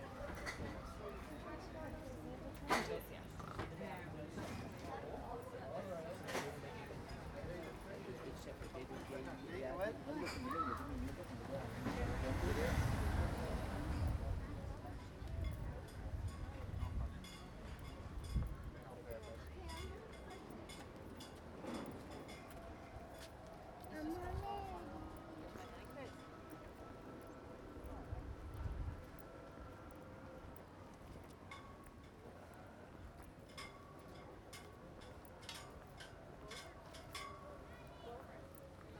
Casement square, Cobh, Co. Cork, Ireland - world listening day soundwalk
an excerpt from our wld2017 soundwalk
18 July 2017